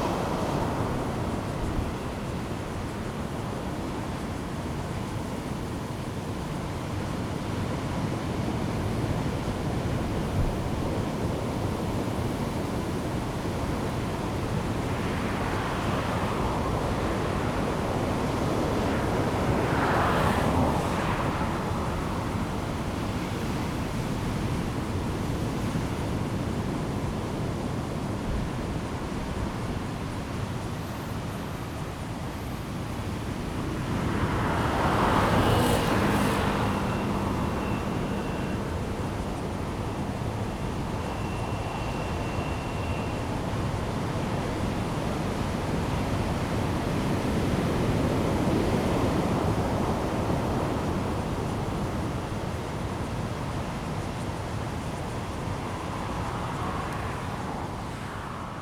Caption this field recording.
sound of the waves, Great wind and waves, Zoom H2n MS+XY